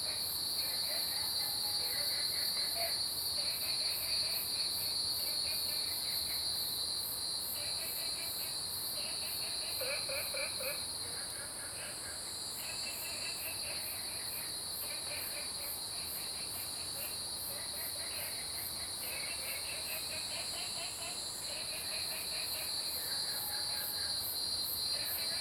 Frogs chirping, Cicada sounds
Zoom H2n MS+XY